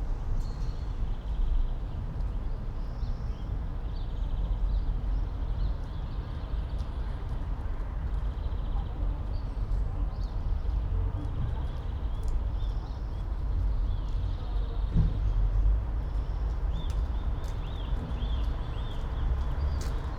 {"title": "all the mornings of the ... - apr 11 2013 thu", "date": "2013-04-11 07:31:00", "latitude": "46.56", "longitude": "15.65", "altitude": "285", "timezone": "Europe/Ljubljana"}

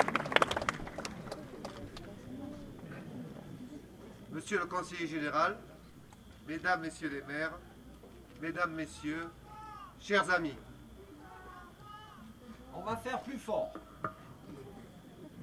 {"date": "1999-08-15 21:18:00", "description": "Lussas, Etats Généraux du documentaire 1999, Mayors opening speech", "latitude": "44.61", "longitude": "4.47", "altitude": "290", "timezone": "Europe/Paris"}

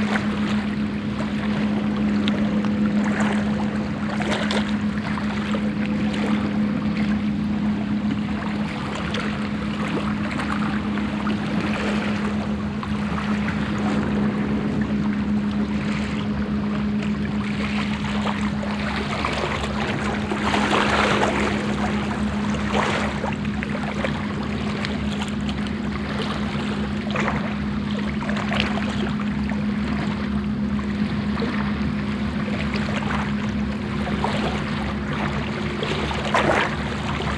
{"title": "Sveio, Norwegen - Norway, Holsvik, bathing bay", "date": "2012-07-19 15:30:00", "description": "At a bathing bay. The sounds of water lapping at the stony coast. A diver diving nearby in the shallow water. In the distance a motor boat.\ninternational sound scapes - topographic field recordings and social ambiences", "latitude": "59.70", "longitude": "5.54", "altitude": "6", "timezone": "Europe/Oslo"}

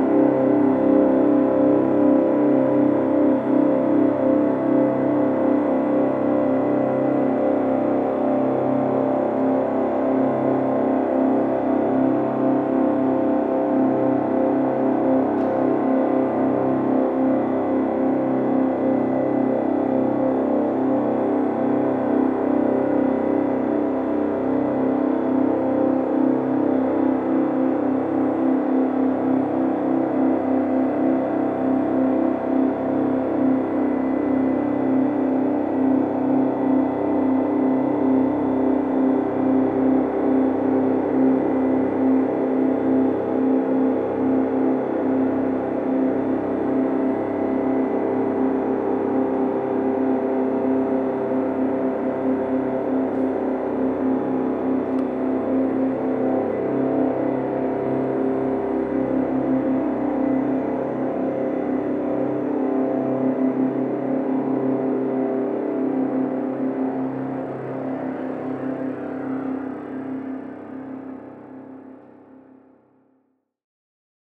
{"title": "Kapucijnenvoer, Leuven, Belgien - Leuven - Anatomisch Theater - sound installation", "date": "2022-04-23 16:50:00", "description": "Inside the historical dome building - the sound of a sound installation by P. Sollmann and K. Sprenger entitled \"modular organ system\" - a part of the sound art festival Hear/ Here in Leuven (B).\ninternational sound scapes & art sounds collecion", "latitude": "50.88", "longitude": "4.69", "altitude": "24", "timezone": "Europe/Brussels"}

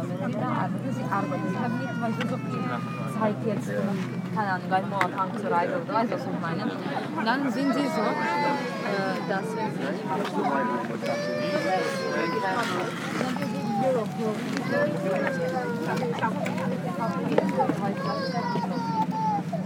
{"title": "København, Denmark - The small mermaid", "date": "2019-04-15 14:00:00", "description": "Posed on a rock, the little mairmaid is the symbol of the Copenhagen city. A lot of tourists are trying to make a selfie, while jostling themself unceremoniously. This is the daily nowadays tourism. A friend said me that Den Lille Havfrue (the name in Danish) is a tourist trap, but he said more : it's a black hole ! It was true.", "latitude": "55.69", "longitude": "12.60", "altitude": "1", "timezone": "GMT+1"}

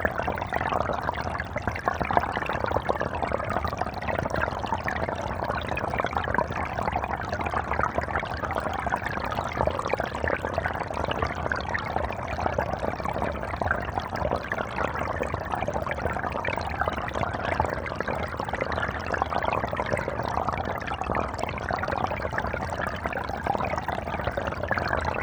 {"title": "Walking Holme Stream 2", "date": "2011-04-18 11:20:00", "description": "Stereo hydrophones downstream from Holme Moss summit", "latitude": "53.53", "longitude": "-1.85", "altitude": "395", "timezone": "Europe/London"}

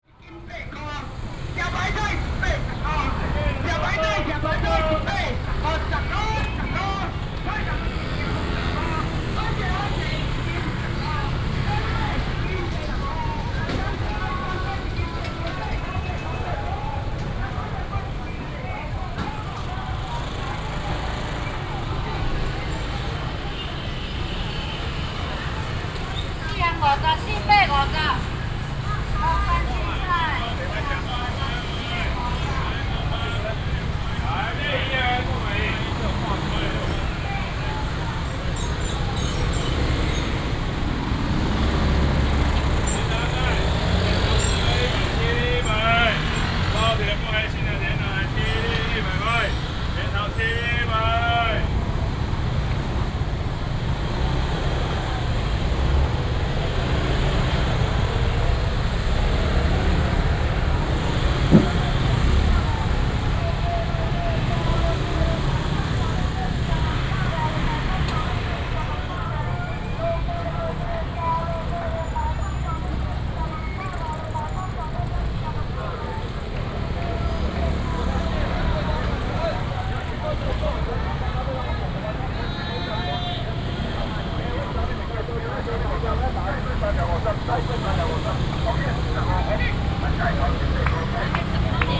{"title": "Nanjing Rd., East Dist., Taichung City - Walking through the market", "date": "2017-03-22 08:37:00", "description": "Walking through the traditional market", "latitude": "24.14", "longitude": "120.69", "altitude": "81", "timezone": "Asia/Taipei"}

